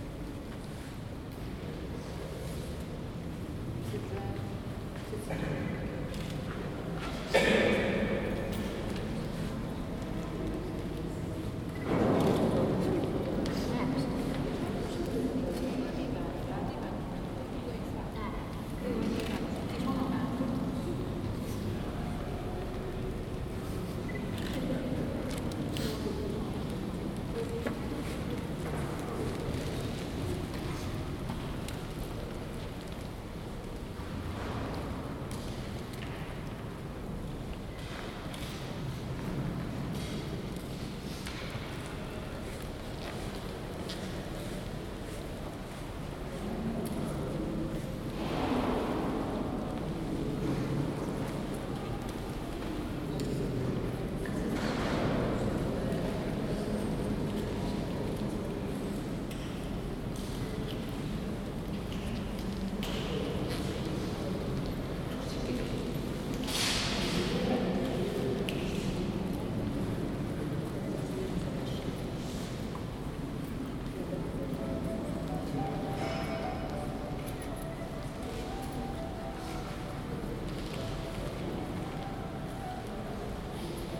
Westminster Abbey - Chapter House. - Chapter House, Westminster Abbey
Includes a lovely few moments near the end. A group of small boys, one of whom has hiccups, start giggling due to the noise their friend is making in this otherwise quiet place. A lovely addition.